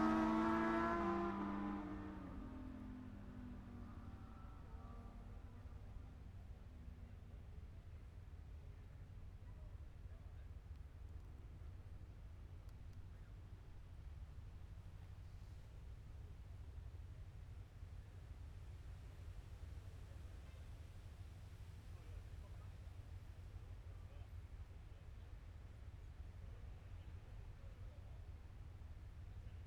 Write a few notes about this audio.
600 cc odds practice ... Mere Hairpin ... Oliver's Mount ... Scarborough ... open lavalier mics clipped to baseball cap ...